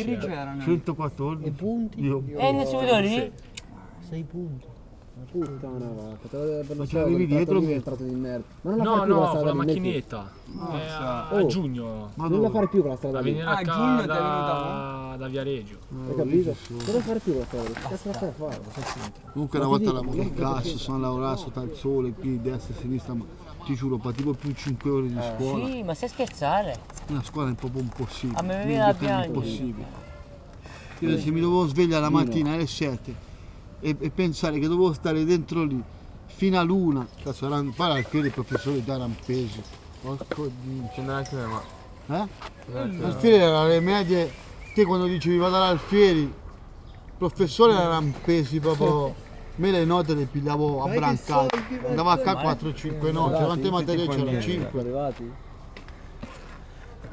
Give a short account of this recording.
Ogni giorno i ragazzi della borgata si ritrovano alle panchine davanti al campo da calcetto. Più o meno a qualsiasi ora c'è qualcuno. Parlano, discutono, ridono, commentano i fatti della borgata e quello che succede nel mondo e nella loro vita.